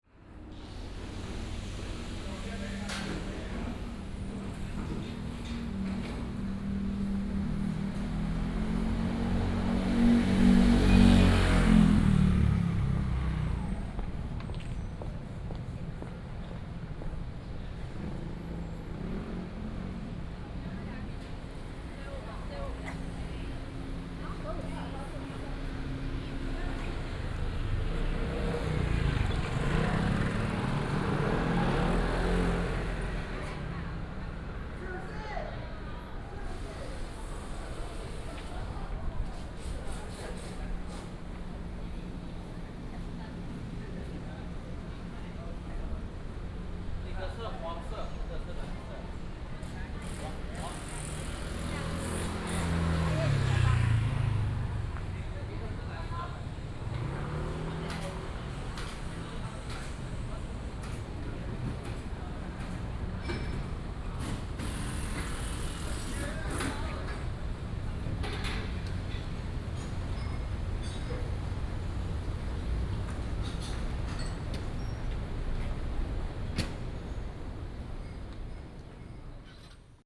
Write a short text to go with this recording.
Traffic Sound, Walking in the alley